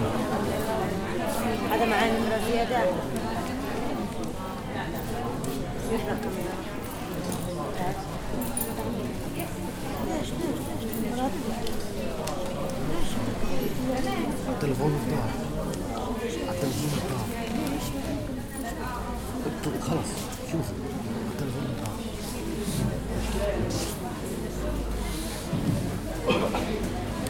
Salah e-Din St, Jerusalem - Post offive at Salah A Din st. Jerusalem
Post offive at Salah A Din st. Jerusalem.
Murmur, Arabic.